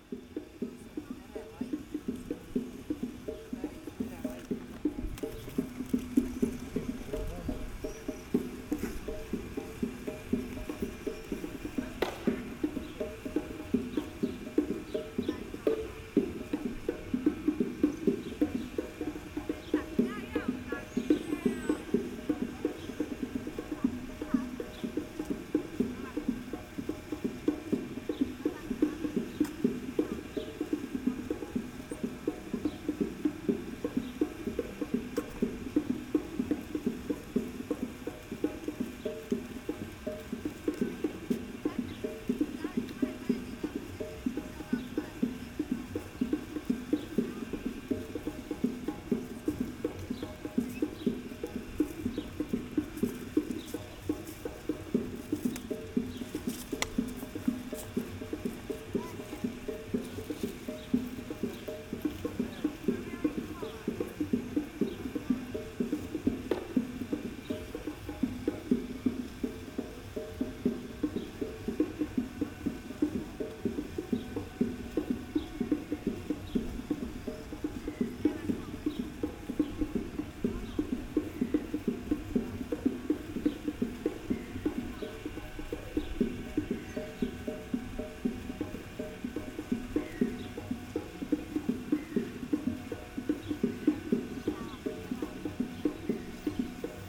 some street musician with percussion